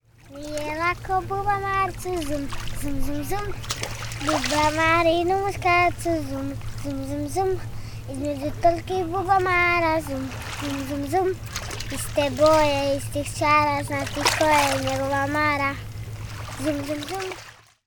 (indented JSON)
{
  "title": "Croatia, Rijeka - lady bug song from Istria",
  "date": "2011-05-28 08:59:00",
  "description": "N. sings the lady bug songs by the water - Nagra Ares-M",
  "latitude": "45.34",
  "longitude": "14.38",
  "altitude": "4",
  "timezone": "Europe/Zagreb"
}